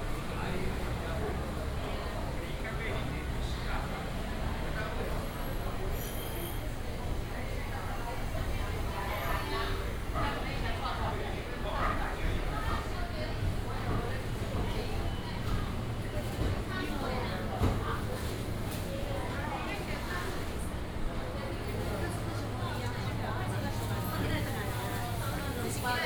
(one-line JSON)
{"title": "大甲第一公有市場, Dajia District - Walking through the market", "date": "2017-01-19 11:02:00", "description": "Walking through the market", "latitude": "24.34", "longitude": "120.62", "altitude": "55", "timezone": "GMT+1"}